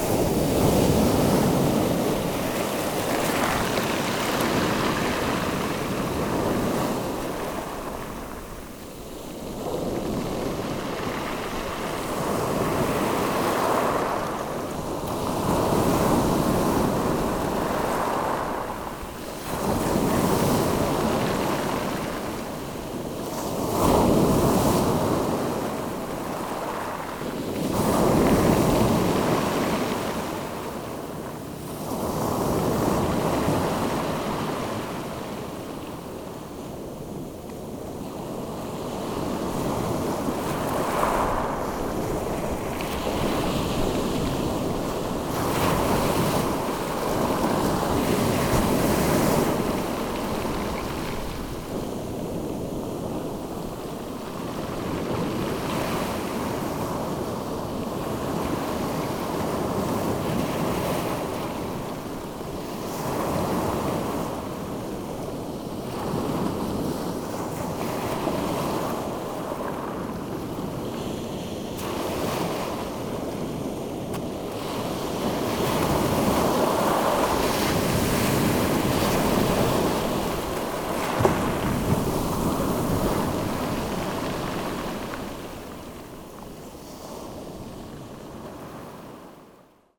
Criel-sur-Mer, France - The sea at Mesnil-Val beach
Sound of the sea, with waves lapping on the pebbles, at the Mesnil-Val beach during high tide. Lot of wind and lot of waves !